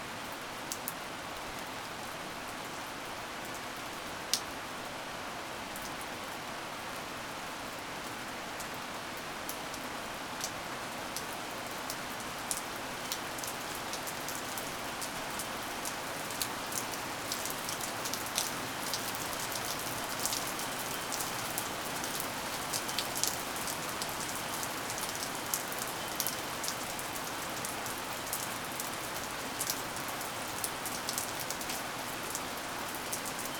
Poznan, balcony - railing bell
rain bouncing of the balcony railing adding subtle bell sounds to the rainstorm noise.